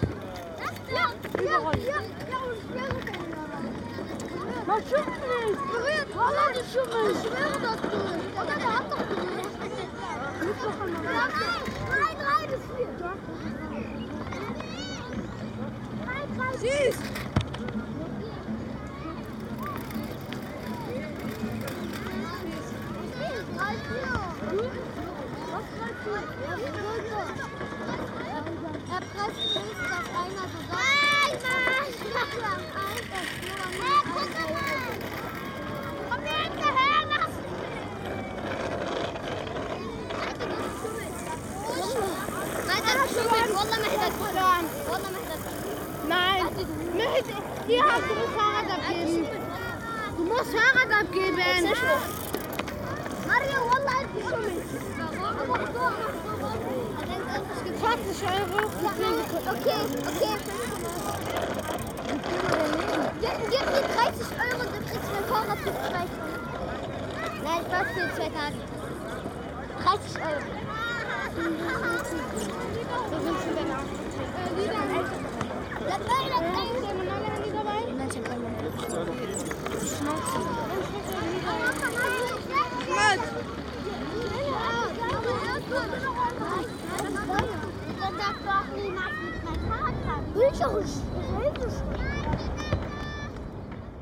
Standort: Auf der ehmaligen Start- und Landebahn, östliches Ende. Blick Richtung Nordwest.
Kurzbeschreibung: Fußballspielende Kinder, Passanten im Gespräch, Radfahrer, Wind.
Field Recording für die Publikation von Gerhard Paul, Ralph Schock (Hg.) (2013): Sound des Jahrhunderts. Geräusche, Töne, Stimmen - 1889 bis heute (Buch, DVD). Bonn: Bundeszentrale für politische Bildung. ISBN: 978-3-8389-7096-7